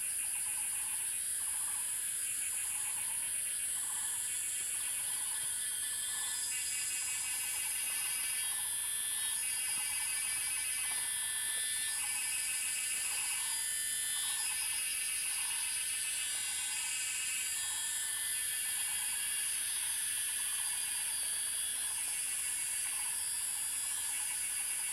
投64號線, 華龍巷魚池鄉 - Cicadas cry
In the woods, Cicadas cry, Bird sounds
Zoom H2n MS+XY
Puli Township, 華龍巷164號